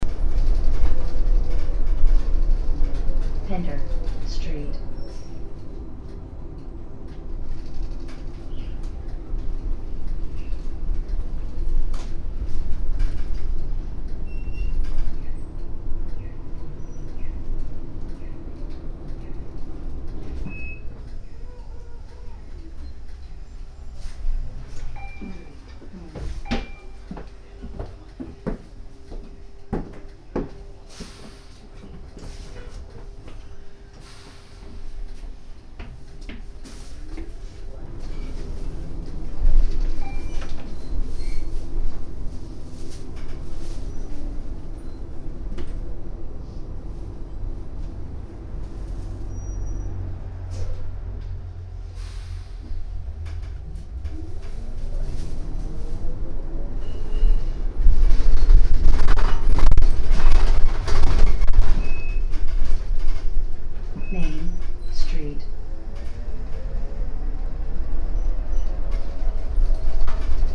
vancouver, pender street, bus drive
in a city bus, driving downtown - automatic voice announcing the next bus stops
soundmap international
social ambiences/ listen to the people - in & outdoor nearfield recordings